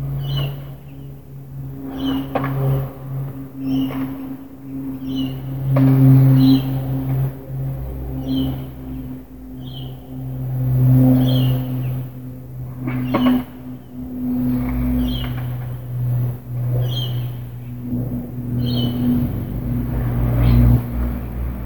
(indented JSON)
{
  "title": "Hercules St, Dulwich Hill NSW, Australia - Skateboard Park",
  "date": "2017-09-22 13:15:00",
  "description": "Skateboarders, cars passing & a plane",
  "latitude": "-33.91",
  "longitude": "151.14",
  "altitude": "16",
  "timezone": "Australia/Sydney"
}